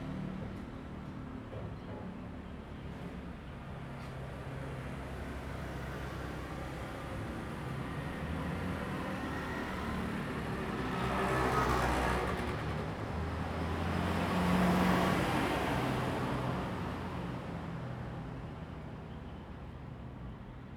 Jilin Rd., Taitung City - In front of the convenience store
Birds singing, Traffic Sound, In front of the convenience store
Zoom H2n MS+XY